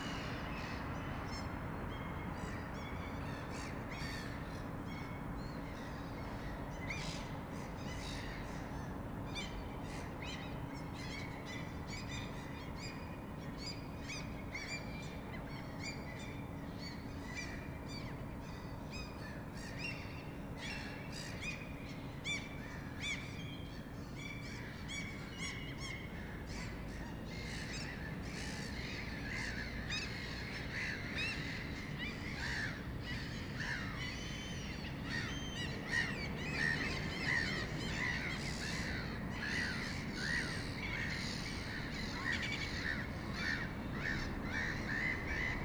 Kumtähden kenttä, Helsinki, Finland - Spring evening seagulls gathering
Seagulls gathering in the park, it is spring time in Helsinki.
Light evening traffic on the background.
Manner-Suomi, Suomi / Finland, 13 April 2021